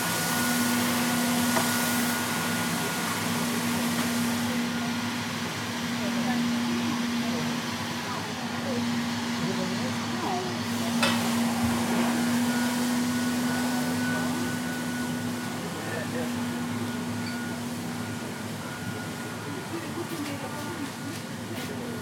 Nida, Lithuania - Supermarket Cleaning
Recordist: Raimonda Diskaitė
Description: Inside the Maxima supermarket. Cleaning machine, people talking and picking up objects off the shelf. Recorded with ZOOM H2N Handy Recorder.